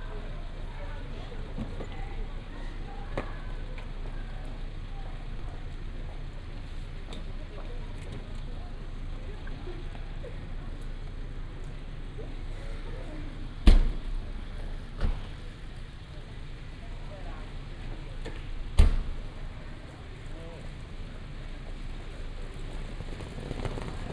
Pl. Forum de Cardeurs / Rue Venel, Aix-en-Provence, Frankreich - evening ambience, walk
early Monday evening ambience at Place de Forum des Cardeurs, short walk into Rue Venel
(PCM D50, OKM2)